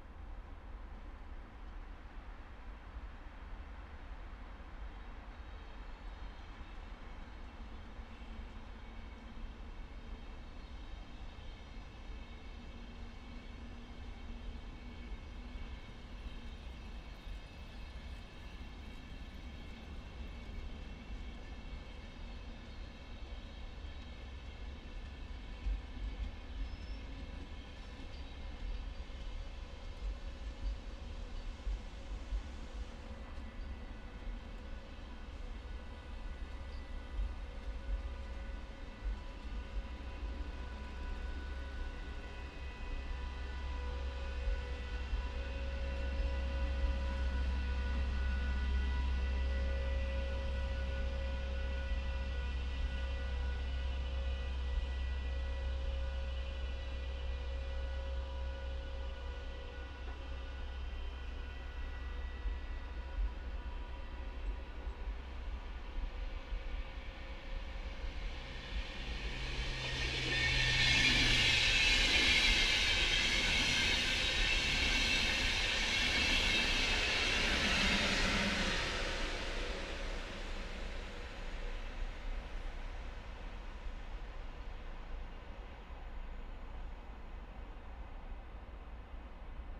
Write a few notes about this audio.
Trains manoeuvring between Helsinki main station and Pasila on 26.10.2015, around 20:00h. Recorded with a LOM stereo pair of Omni microphones and (separate file but simultaneously) an Electrosluch 3 to record electrostatics. Minimal editing done, no cutting.